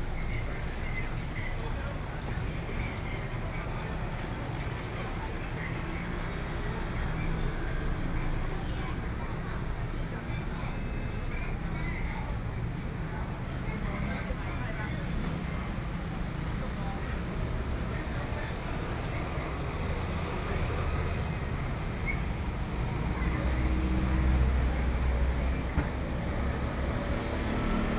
Puxin - Entrance to the market
Noon, the streets of the Corner, traffic noise, Hours markets coming to an end, Tidying up, Sony PCM D50+ Soundman OKM II